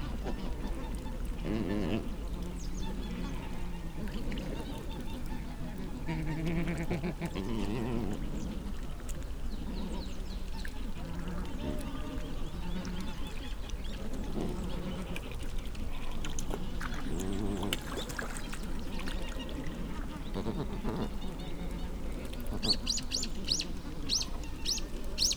문산 철새 Migratory geese feeding
문산_철새_Migratory geese feeding...a large group of these voxish wild migratory geese were feeding liberally among wintry rice fields...they appeared to vocalize while eating with low chesty, throaty sounds...and to socialize using also mighty nasal honks and squawks...increasing human use of this area, such as construction of new houses and businesses in this valley, is apparent...human/wild-life convergence seems evident in this recording...